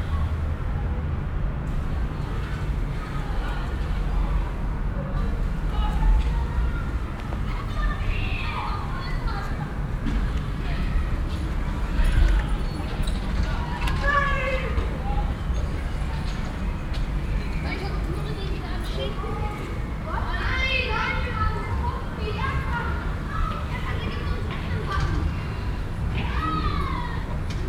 Ostviertel, Essen, Deutschland - essen, frida levy school yard
At the school yard of the Frida-Levy school. The sound of the schoool bell and the voices of the pupils entering the school yard.
An der Frida Levy Gesamtschule. Der Klang der Pausenglocke und die Stimmen von Schulkindern auf dem Schulhof.
Projekt - Stadtklang//: Hörorte - topographic field recordings and social ambiences